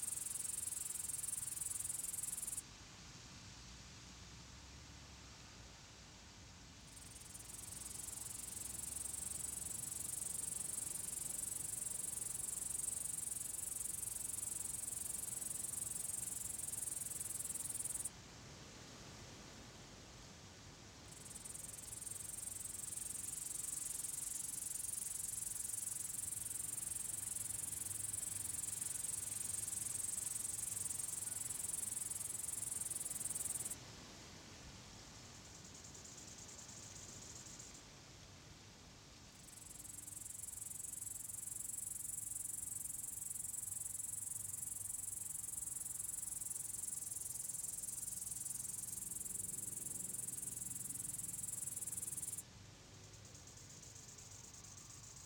Little abandoned airport. Windy day.
Utenos rajono savivaldybė, Utenos apskritis, Lietuva, 12 September